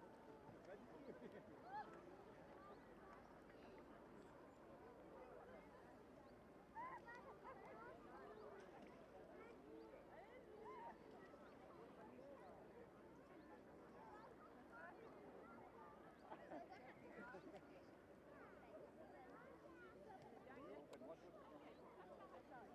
Oyonnax, France - Lac Genin (Oyonnax - Ain)
Lac Genin (Oyonnax - Ain)
Dernier week-end avant la rentrée scolaire
Le soleil joue avec les nuages, la température de l'eau est propice aux baignades
la situation topographique du lac (dans une cuvette) induit une lecture très claire du paysage sonore.
ZOOM F3 + Neuman KM184
Auvergne-Rhône-Alpes, France métropolitaine, France, August 2022